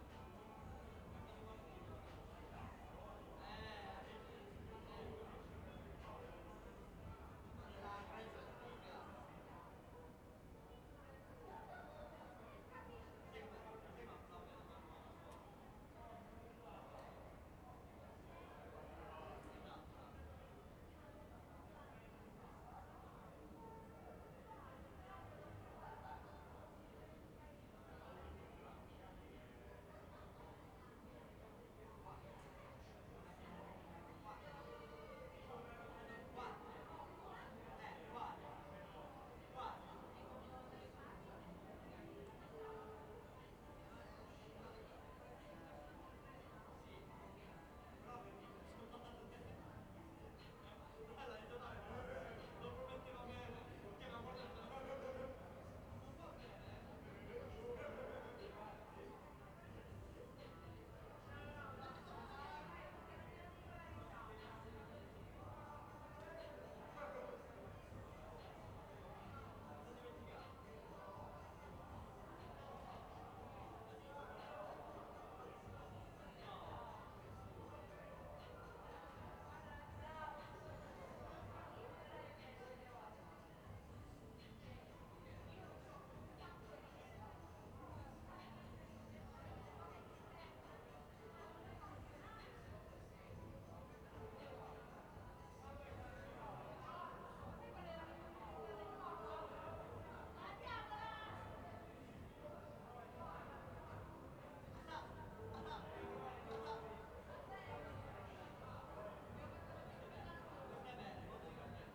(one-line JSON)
{"title": "Ascolto il tuo cuore, città. I listen to your heart, city. Several chapters **SCROLL DOWN FOR ALL RECORDINGS** - Round Midnight April Friday with pipes sounds in the time of COVID19: soundscape.", "date": "2021-04-16 23:58:00", "description": "\"Round Midnight April Friday with pipes sounds in the time of COVID19\": soundscape.\nChapter CLXVIII of Ascolto il tuo cuore, città. I listen to your heart, city\nFriday, April 16th, 2021. Fixed position on an internal terrace at San Salvario district Turin, at the end I play some plastic and metal pipes (for electrical installation).\nOne year and thirty-seven days after emergency disposition due to the epidemic of COVID19.\nStart at 11:58 p.m. end at 00:15 a.m. duration of recording 16’48”", "latitude": "45.06", "longitude": "7.69", "altitude": "245", "timezone": "Europe/Rome"}